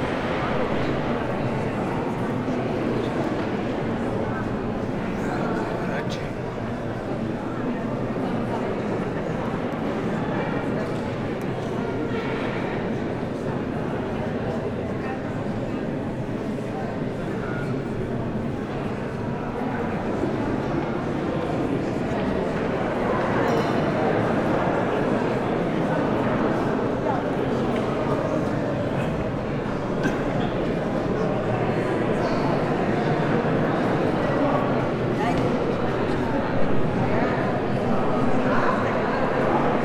knight's hall, Brežice castle, Slovenia - voices
Slovenija, European Union, 22 June 2013